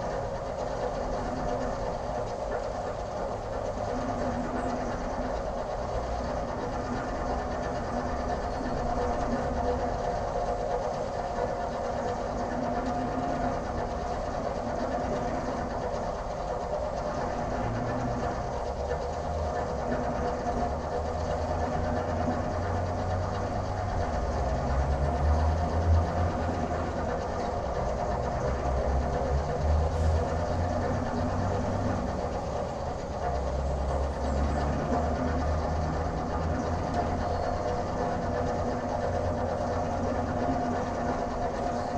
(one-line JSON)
{"title": "Kaliningrad, Russia, mechanical advertising board", "date": "2019-06-07 11:30:00", "description": "there are two monster mechanical advertising boards in the town. listening to one of them", "latitude": "54.71", "longitude": "20.51", "altitude": "2", "timezone": "Europe/Kaliningrad"}